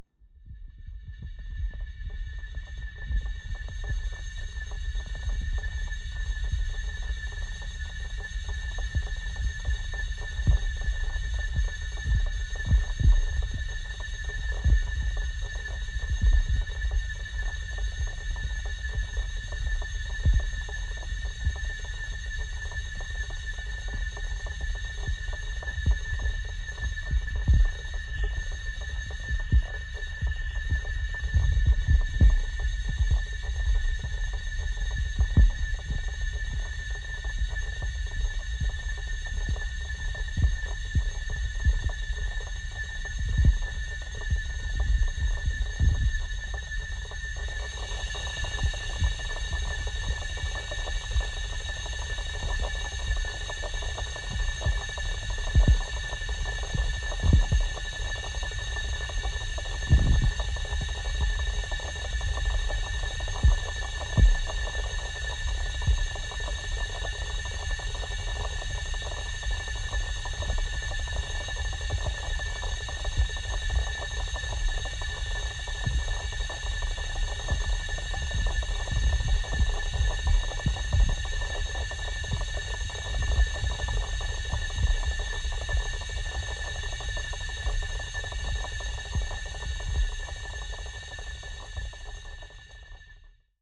{
  "title": "Downtown, Colorado Springs, CO, USA - Water Pipes + Meter",
  "date": "2015-11-28 14:50:00",
  "description": "Recorded with a pair of JrF contact mics and a Marantz PMD 661",
  "latitude": "38.85",
  "longitude": "-104.83",
  "altitude": "1840",
  "timezone": "America/Denver"
}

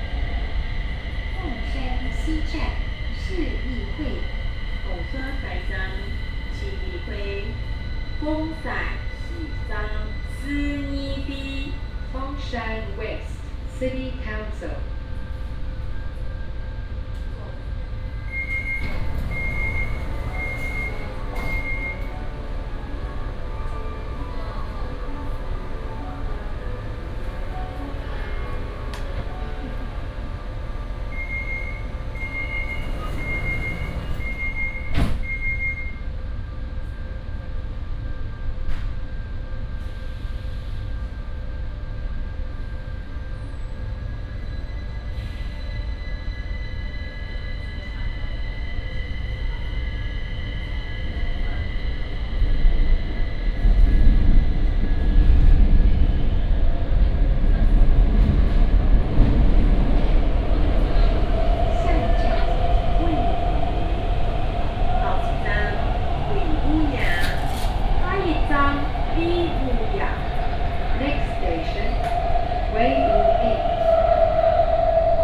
{
  "title": "Orange Line (KMRT), 高雄市 - Take the MRT",
  "date": "2018-03-30 10:56:00",
  "description": "Take the MRT, In-car message broadcasting",
  "latitude": "22.62",
  "longitude": "120.34",
  "altitude": "10",
  "timezone": "Asia/Taipei"
}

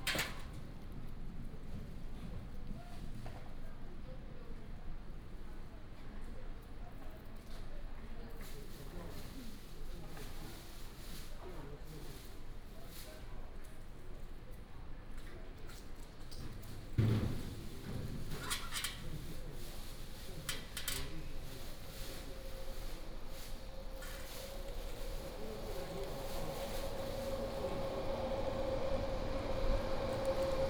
{
  "title": "Taipei city, Taiwan - At the train station platform",
  "date": "2016-12-04 06:49:00",
  "description": "At the train station platform",
  "latitude": "25.05",
  "longitude": "121.52",
  "altitude": "29",
  "timezone": "GMT+1"
}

